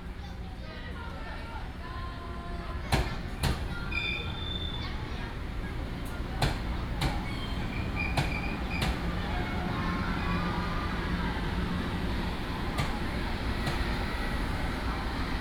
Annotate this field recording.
In the train station platform, Train arrives and leaves